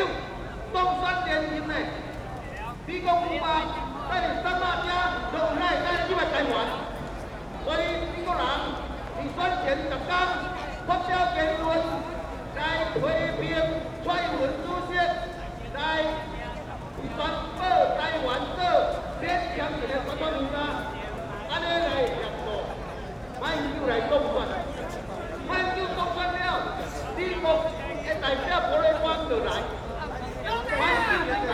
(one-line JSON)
{"title": "Taipei, Taiwan - Protests", "date": "2012-03-08 11:15:00", "description": "Protests, Rode NT4+Zoom H4n", "latitude": "25.04", "longitude": "121.52", "altitude": "11", "timezone": "Asia/Taipei"}